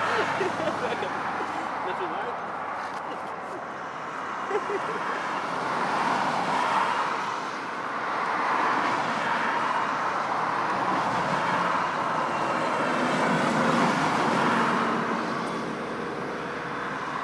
Manchester International Airport - Ringway Road
On Ringway Road, facing runway 23R.